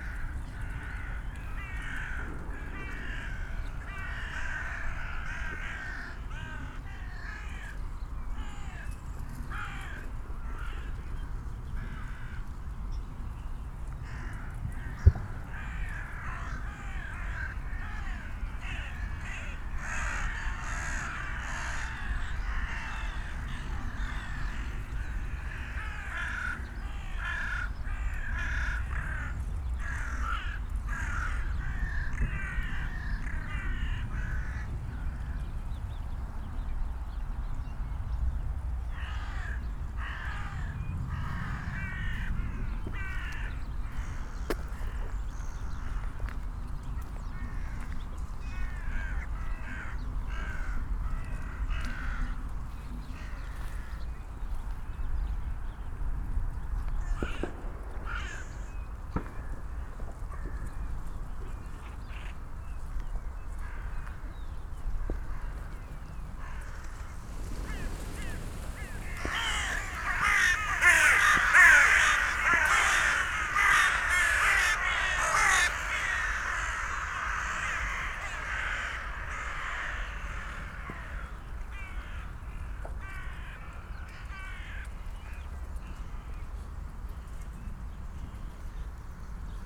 {
  "title": "Tempelhofer Feld, Berlin, Deutschland - dun crows gathering",
  "date": "2018-12-31 14:50:00",
  "description": "walking around, hundreds of dun crows (Nebelkähen) and a few rooks (Saatkrähen) gathering on this spot of Tempelhofer Feld. For not always clear reasons, e.g. fireworks, hikers clapping, they get very excited from time to time. Interesting variations of calls and other sounds.\n(Sony PCM D50, DPA4060)",
  "latitude": "52.48",
  "longitude": "13.40",
  "altitude": "48",
  "timezone": "Europe/Berlin"
}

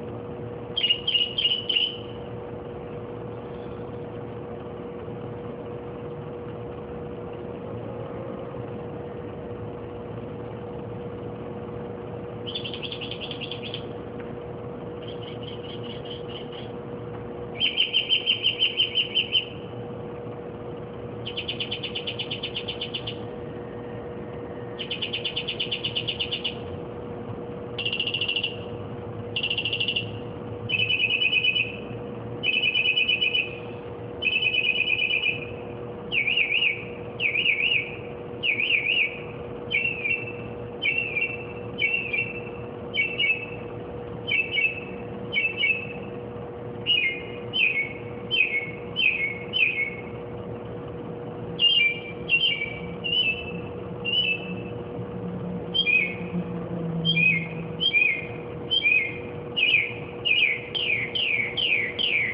{
  "title": "jefferson ave, brooklyn",
  "date": "2010-06-04 02:15:00",
  "description": "bird singing and chirping various car-alarm-like-songs in brooklyn - with occasional street noises",
  "latitude": "40.68",
  "longitude": "-73.94",
  "altitude": "15",
  "timezone": "America/New_York"
}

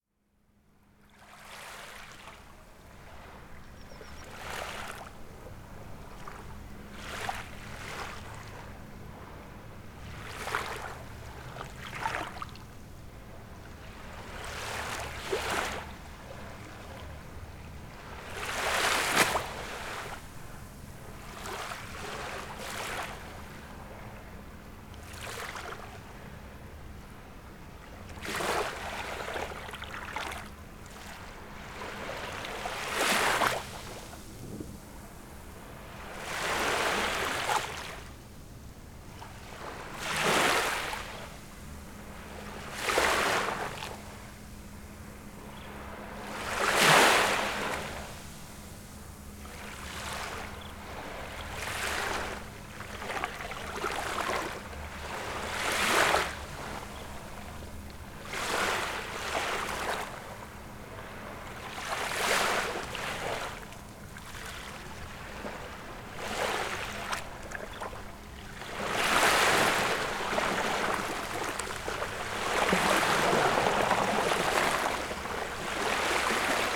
{
  "title": "Jumeira 3 - Dubai - United Arab Emirates - DXB Jumeira Beach 6AM",
  "date": "2011-10-23 06:35:00",
  "description": "Recorded with my H4n, a boat passed by and provided some nice ways which you can hear moving from left to right.",
  "latitude": "25.19",
  "longitude": "55.23",
  "altitude": "13",
  "timezone": "Asia/Dubai"
}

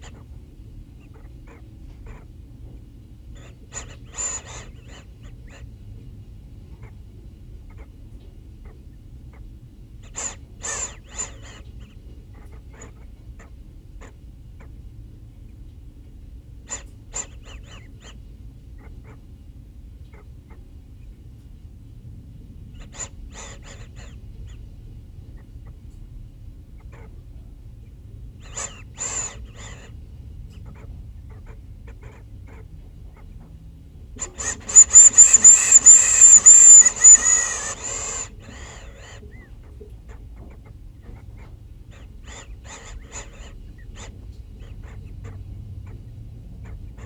{
  "title": "Staten Island",
  "date": "2012-01-08 23:15:00",
  "description": "leaking radiator valves",
  "latitude": "40.64",
  "longitude": "-74.12",
  "altitude": "10",
  "timezone": "America/New_York"
}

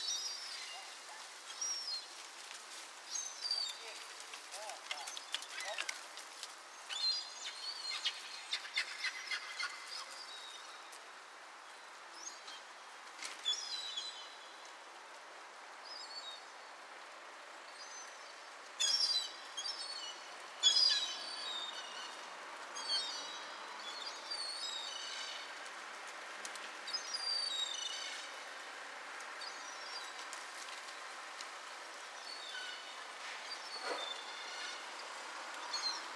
{
  "title": "Olivais Sul, Lisboa, Portugal - Urban Seaguls - Urban Seaguls",
  "date": "2017-12-25 16:49:00",
  "description": "Seaguls in urban enviroment. Recorded with AB omni primo 172 capsules and a SD mixpre6.",
  "latitude": "38.76",
  "longitude": "-9.12",
  "altitude": "86",
  "timezone": "Europe/Lisbon"
}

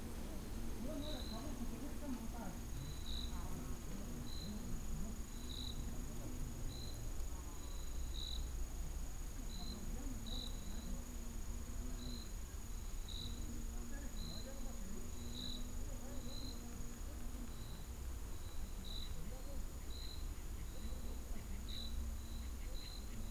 {
  "title": "La Angostura, Guanajuato, Mexico - Ambiente en La Angostura.",
  "date": "2022-03-12 19:02:00",
  "description": "Atmosphere in La Angostura.\nA little inhabited place.\nI made this recording on march 13th, 2022, at 7:02 p.m.\nI used a Tascam DR-05X with its built-in microphones and a Tascam WS-11 windshield.\nOriginal Recording:\nType: Stereo\nUn lugar poco habitado.\nEsta grabación la hice el 13 de marzo de 2022 a las 19:02 horas.",
  "latitude": "21.26",
  "longitude": "-101.70",
  "altitude": "1996",
  "timezone": "America/Mexico_City"
}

